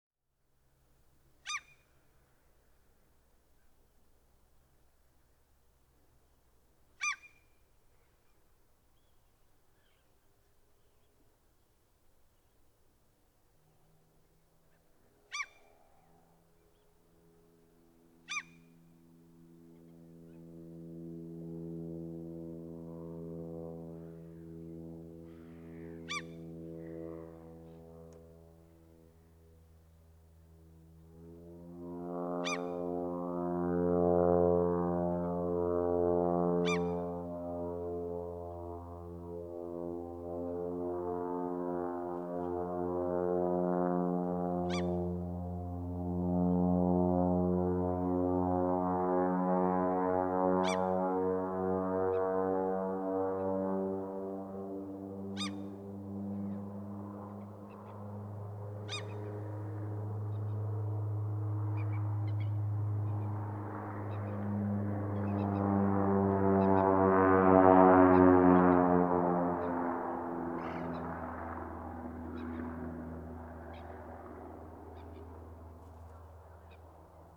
Empty field at Toppilansalmi, Oulu, Finland - Small plane flying above Hietasaari
Small plane flying above Hietasaari, Oulu on a calm May evening during sunset. Recorded with Zoom H5 with default X/Y capsule.
Manner-Suomi, Suomi, 16 May, ~22:00